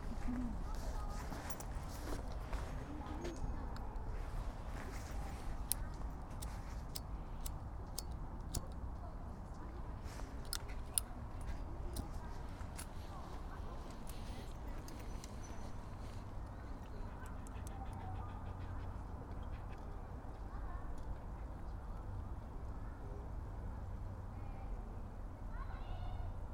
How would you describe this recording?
Stood on riverside in front of Dunston Staithes. Children passing on bikes behind. Adults with push chairs. Birds over river. Train and cars in distance. Air Ambulance, helicopter flys overhead. Sound of water going into river. You can also hear our dog and my partner lighting a cigarette. Recorded on Sony PCM-M10.